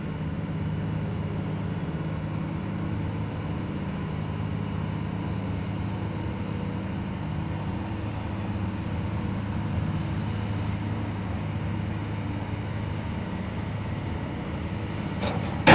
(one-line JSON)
{"title": "Yainville, France - Yainville ferry", "date": "2016-09-17 10:00:00", "description": "The Yainville ferry, charging cars. At the end, the horses arrive.", "latitude": "49.46", "longitude": "0.82", "timezone": "Europe/Paris"}